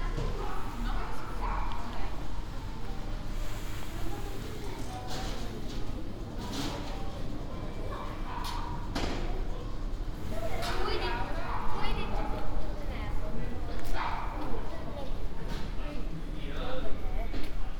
METS-Conservatorio Cuneo: 2019-2020 SME2 lesson1C
“Walking lesson SME2 in three steps: step C”: soundwalk
Thursday, October 1st 2020. A three step soundwalk in the frame of a SME2 lesson of Conservatorio di musica di Cuneo – METS department.
Step C: start at 10:57 a.m. end at 11:19, duration of recording 22’19”
The entire path is associated with a synchronized GPS track recorded in the (kmz, kml, gpx) files downloadable here:
1 October 2020, 10:57